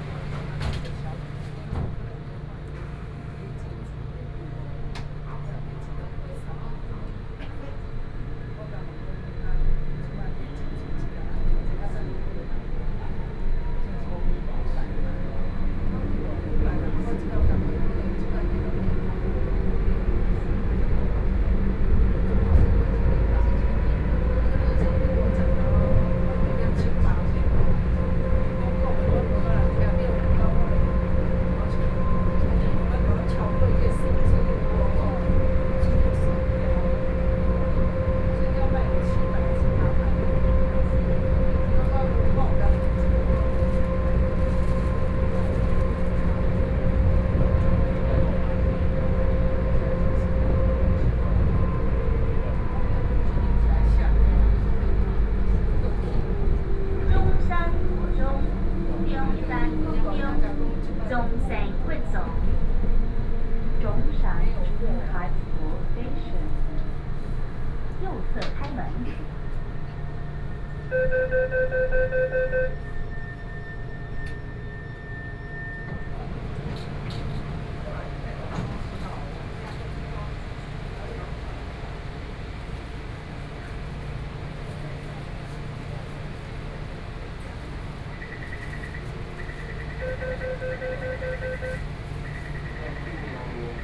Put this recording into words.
from Zhongxiao Fuxing station to Songshan Airport station, Sony PCM D50 + Soundman OKM II